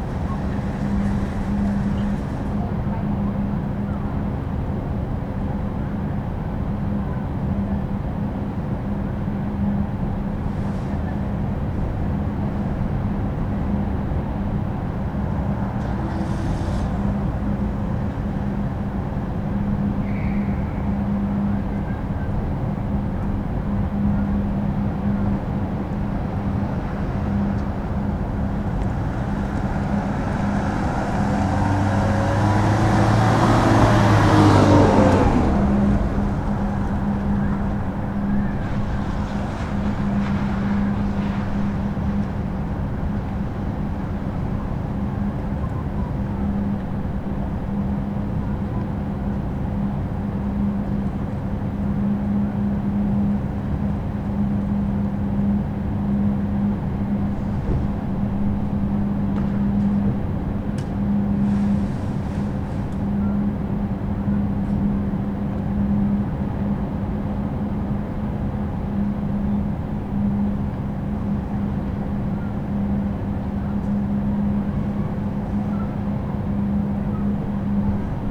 berlin, maybachufer: supermarket - the city, the country & me: outside ventilation of the adjacent supermarket
car parking roof of a supermarket, outside ventilation of the adjacent supermarket
the city, the country & me: january 11, 2014
Berlin, Germany, January 2014